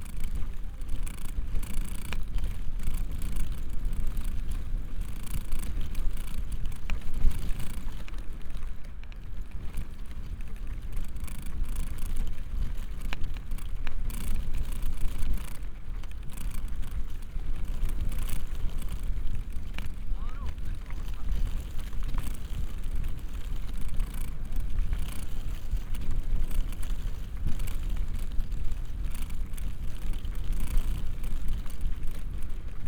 Sasino, beach entrance - wilted leaf
sitting on a beach with my ear very close to a small, wilted leaf jiggling in the wind. (sony d50 + luhd pm-01bins)
Poland, 27 May 2016, ~15:00